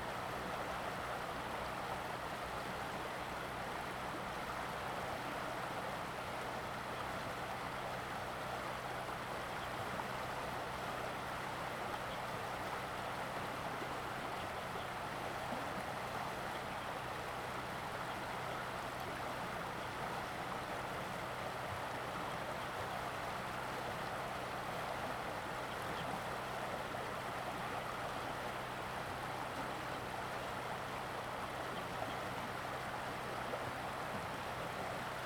stream, On the embankment, Bird call
Zoom H2n MS+XY
Taitung County, 東64鄉道, 1 April